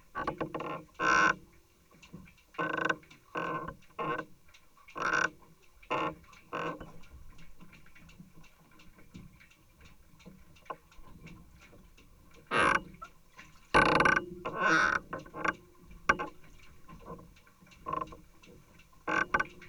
Lithuania, Paluse, pontoon
contact microphone between the planks of a pontoon...strangely, it also has captured a voice of my 7 months old son:)